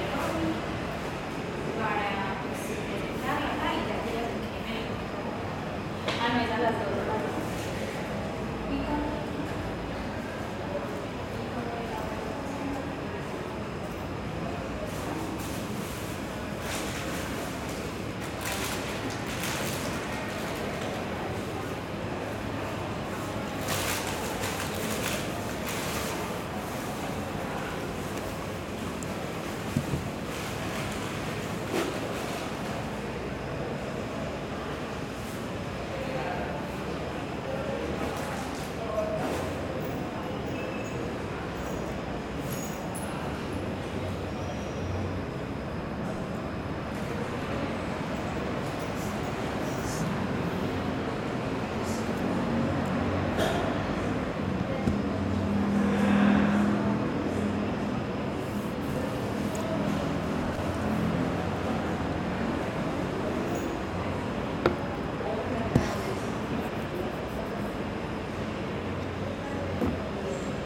Calle 67 No. 53 - 108 Bloque 9, oficina 243, Medellín, Aranjuez, Medellín, Antioquia, Colombia - Tarde Ocupada
Una tarde que empieza tranquila en el boque 9 de la Universidad de Antioquia, pero que lentamente mientras las clases inician se puede escuchar un poco mas de la vida universitaria desarrollarse
Valle de Aburrá, Antioquia, Colombia, February 2022